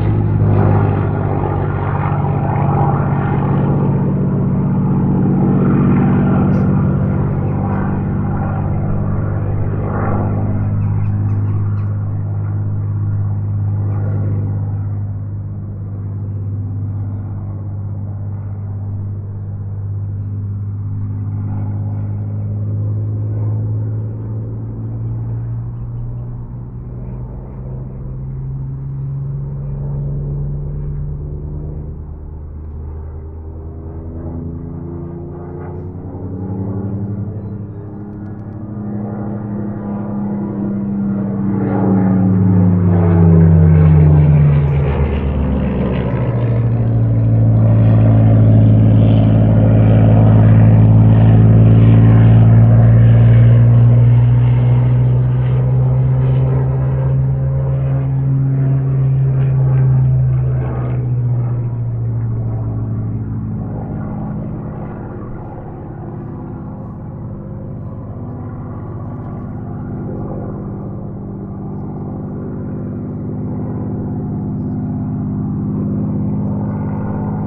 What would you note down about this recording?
A rare opportunity to record a WW2 Spitfire above my house performing an aerobatic display. Maybe you can detect the slow victory roll at the end. MixPre 6 II with 2 Sennheiser MKH 8020s on the roof to capture the best sounds reverberating off The Malvern Hills and across The Severn Valley.